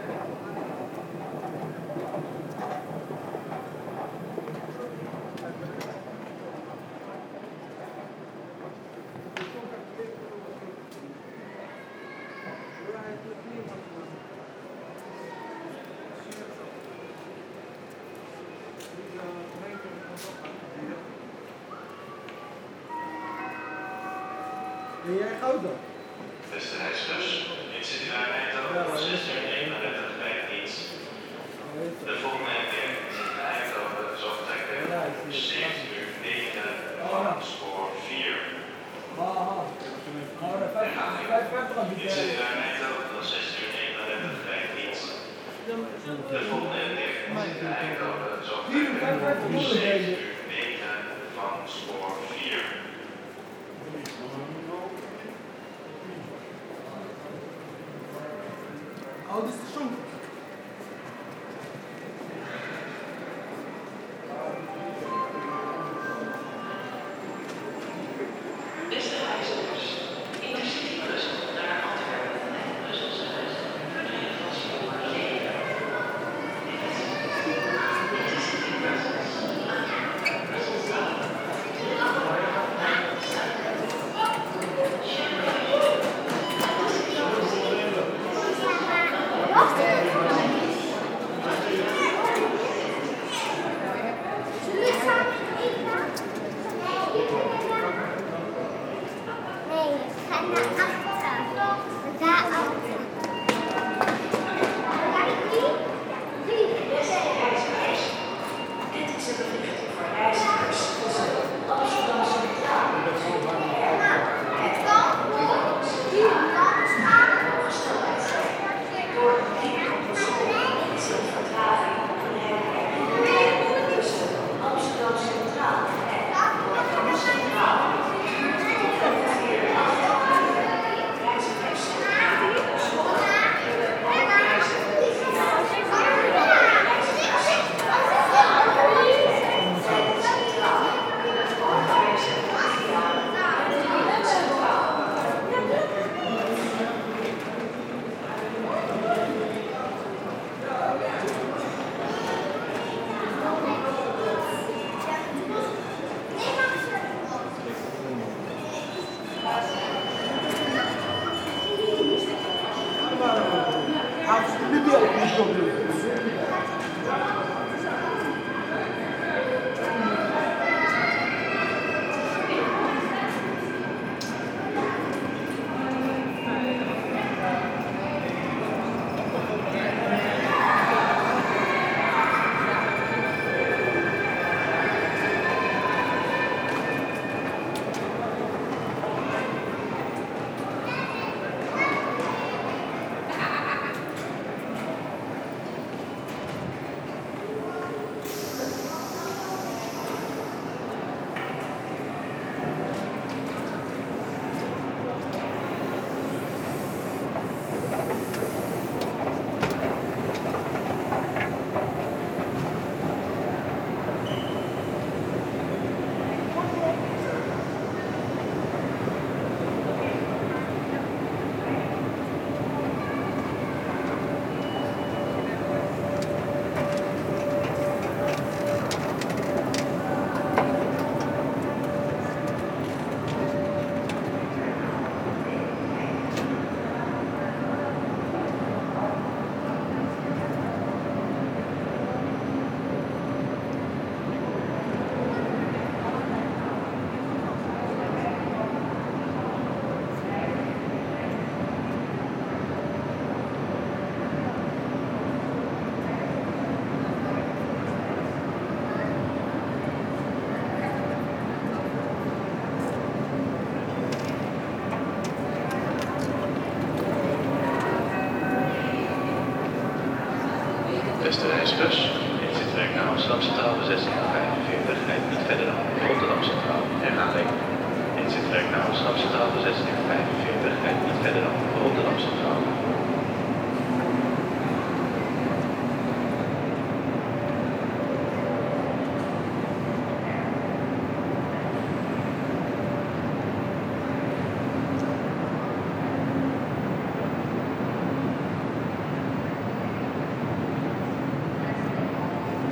Breda, Nederlands - Breda station
During the time of a delayed train, sounds of the Breda station. Entering the station, people talking about the delayed trains, noisy sounds of the gates, and after this, a long ballet of passing trains, accompanied with endless announcements.